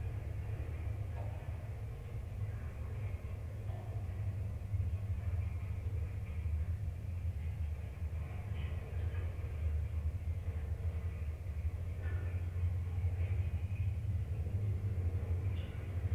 Fishermans Bothy Isle of Mull, UK - Wire Fence
A wire fence surrounding a small field next to where I was staying. As the wind blew, small stalks of grass and bracken 'played' the wires of the fence and the mics picked up the
sound of the wind as an overlay to the whole performance. JRF contact mics into a Sony M10